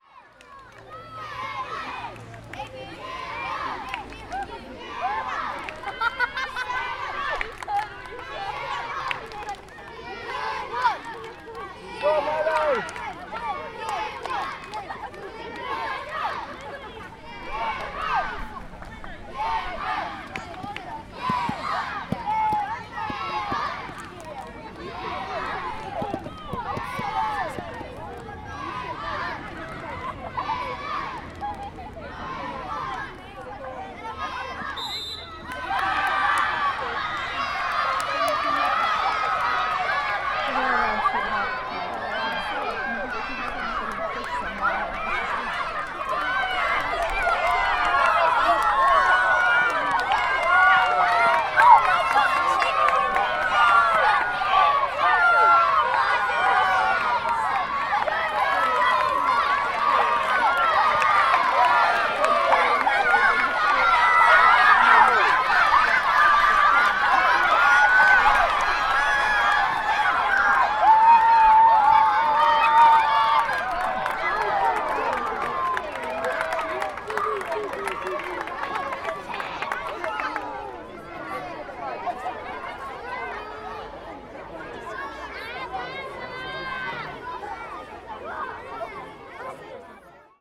Oxford, Oxfordshire, UK - Sports Day Race, 2014
Noise / sound of the two key-stages' sports day at St Barnabas School. A race takes place during the recording. Recorded with a Zoom H4n with Windcat on, close to the 'spectators'.